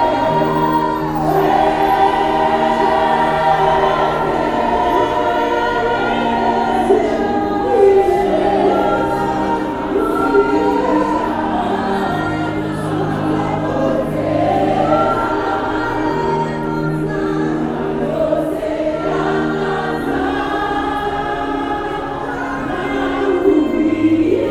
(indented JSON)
{
  "title": "Park Lane, Harare, Zimbabwe - City Presbyterian Church Harare",
  "date": "2012-10-04 18:40:00",
  "description": "Its almost 7 and I’m rushing for my combi taxi on the other side of Harare Gardens… as I turn the corner into Park Lane, past the National Gallery, gospel sounds are filling the street. All windows and doors are open on the building opposite the hotel… I linger and listen… and I’m not the only one…",
  "latitude": "-17.83",
  "longitude": "31.05",
  "altitude": "1487",
  "timezone": "Africa/Harare"
}